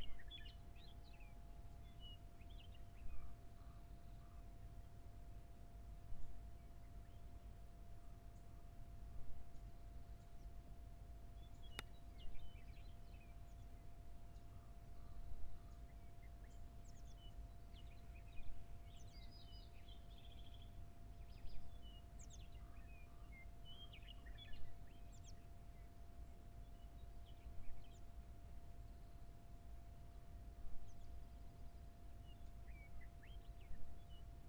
neoscenes: Carrizo Plains morning sounds